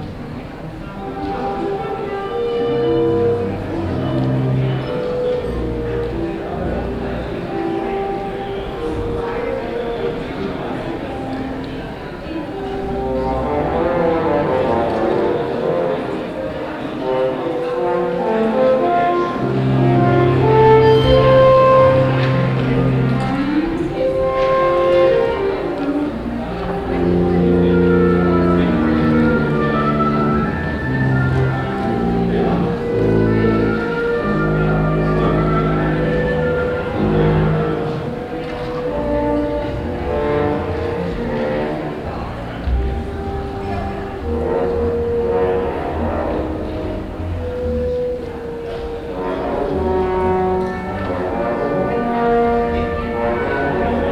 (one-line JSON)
{"title": "Südviertel, Essen, Deutschland - essen, philharmonie, alfred krupp concert hall, orchestra rehearsal", "date": "2014-06-03 12:00:00", "description": "Im Alfred Krupp Saal der Philharmonie Essen. Der Klang einer Probe des Sinfonieorchesters Teil 3 - Ende der Probe - Pause\nInside the Alfred Krupp concert hall. The sound of a rehearsal of the symphonic orchestra - part 3 - end of the rehearsal - break\nProjekt - Stadtklang//: Hörorte - topographic field recordings and social ambiences", "latitude": "51.45", "longitude": "7.01", "altitude": "104", "timezone": "Europe/Berlin"}